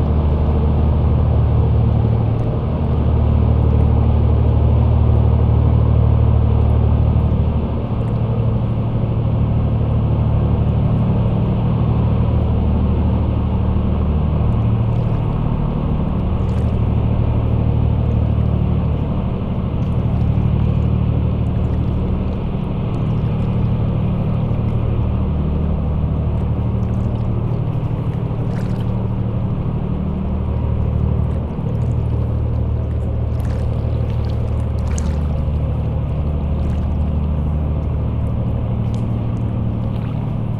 Tosny, France - Boat
A very long double boat is passing by on the Seine River. It's the Dauphin from Lafarge. It's an industrial boat pushing two enormous containers. It's transporting sand and gravels, coming from the nearby quarry.
21 September 2016, 16:00